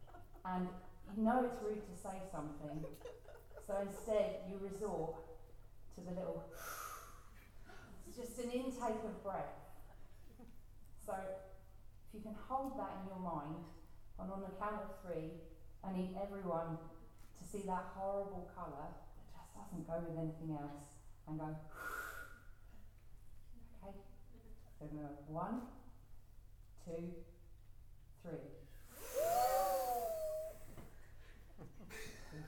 Shetland Wool Week Opening Ceremony, Bowls Hall, Clickimin Leisure Centre, Lerwick, Shetland Islands - Audience participation and the birth of Knitting Pundits
For Shetland Wool Week this year, I decided that I needed to launch a new sound piece. The piece is called Knitting Pundits, and involves myself and my wondrous comrade Louise Scollay (AKA Knit British) commentating on the technical skillz of knitters in the manner of football pundits. We wrote out a script which included a lot of word play around foot-work (football) and sock construction (knitting) and also involving superb puns involving substitutions (as in when you run out of yarn) and so on. We read out our entertaining script and then explained that to really bring the concept alive, we needed some sounds from the audience - the sense of an engaged stadium of knitters, following the play with rapt attention. To collect their responses and to create this soundscape, I read out scenarios in knitting which I then correlated to football. An offside situation; a knitting foul; a goal; winning the match; and making it to the wool cup.
Shetland Islands, UK, 29 September 2015